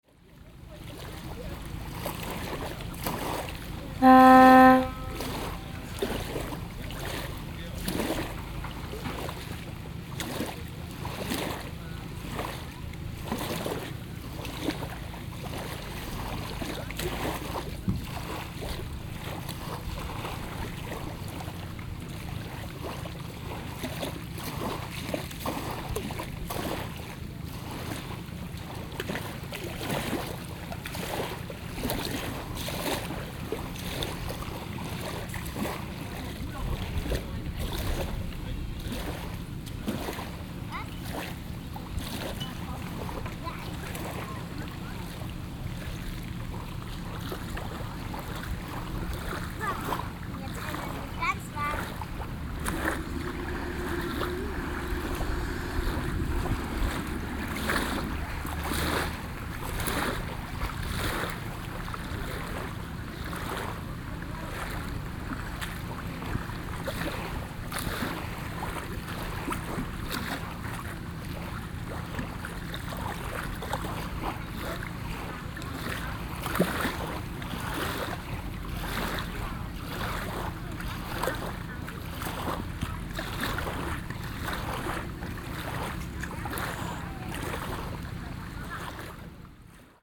Buckow, Märkische Schweiz:
Anlegestelle am Schermützelsee, Wellen, Wasser / landing at lake Schermützelsee, waves, water
Germany